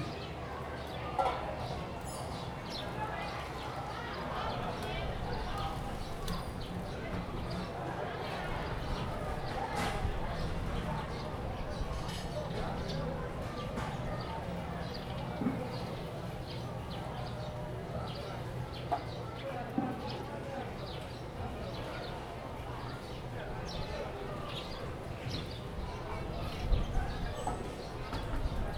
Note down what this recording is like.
Small village, The sound of the kitchen, birds sound, Zoom H2n MS +XY